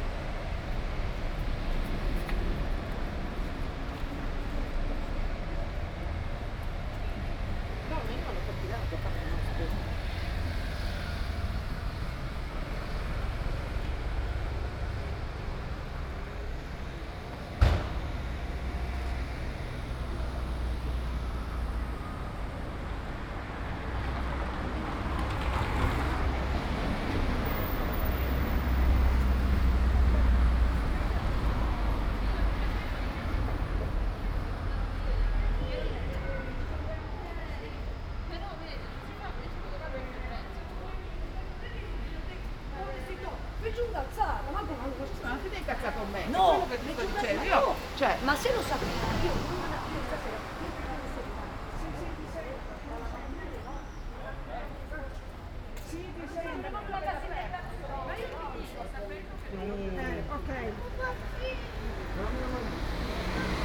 "It’s five o’clock on Thursday with bells, post-carding and howling dog in the time of COVID19" Soundwalk
Chapter XC of Ascolto il tuo cuore, città. I listen to your heart, city
Thursday, May 28th 2020. San Salvario district Turin, walking to Corso Vittorio Emanuele II and back, seventy-nine days after (but day twenty-five of Phase II and day twelve of Phase IIB and day six of Phase IIC) of emergency disposition due to the epidemic of COVID19.
Start at 4:50 p.m. end at 5:19 p.m. duration of recording 29’13”
The entire path is associated with a synchronized GPS track recorded in the (kmz, kml, gpx) files downloadable here:
28 May 2020, ~17:00